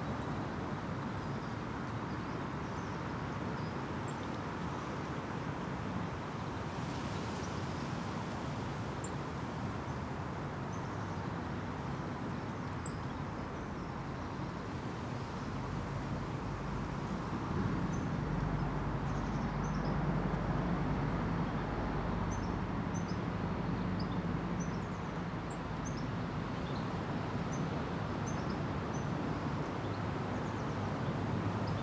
Contención Island Day 77 inner southwest - Walking to the sounds of Contención Island Day 77 Monday March 22nd
The Drive Moor Crescent High Street
Baskets bulging the honeybees are dusted yellow with willow pollen
In the dip road sounds pass above me a robin sings
The ground is wet mud and puddles from rain and melting snow